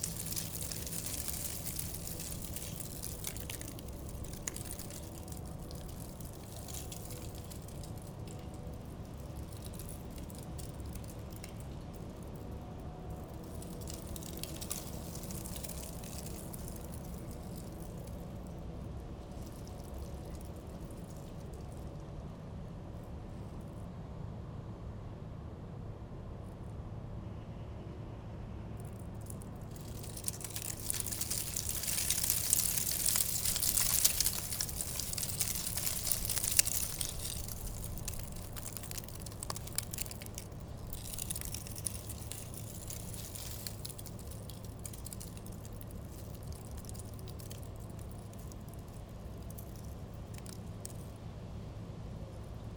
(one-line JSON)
{"title": "Quartier du Biéreau, Ottignies-Louvain-la-Neuve, Belgique - The sacred stone", "date": "2016-09-10 10:30:00", "description": "In 1968, french speaking students were fired from the Leuven university, a dutch speaking university. Problems were so important, the autorities made a completely new city, called \"The New Leuven\", which is said in french Louvain-La-Neuve. Today, it's an enormous french speaking university and quite big city ; you can see it with the Google Map, it's huge ! During this difficult perdiod, students stole one cobblestone in Leuven and put it in concrete, here in Louvain-La-Neuve, as we are here in the very first place built in the city. It's a symbol, the old stone from Leuven for a new city in Louvain-La-Neuve. This stone is traditionnaly called the Sacred Stone. As I cover as much as I can the Louvain-La-Neuve city in an aporee sound map, it was important for me to speak about this lonely stone. Today exceptionnaly, wind was blowing in vortex, leading dead leaves. In other times, this place called Sainte-Barbe, is extraordinary quiet. So, here is my sound of the Sacred Stone.", "latitude": "50.67", "longitude": "4.62", "altitude": "140", "timezone": "Europe/Brussels"}